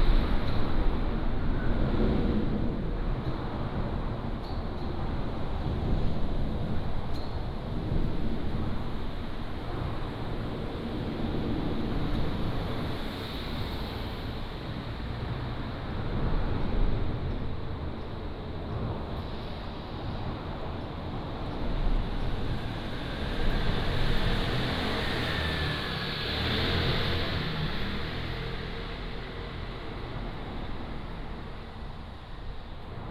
Guangfu Rd., 三義鄉廣盛村 - Under the highway
Under the highway, Traffic sound
Miaoli County, Taiwan, 16 February, ~12:00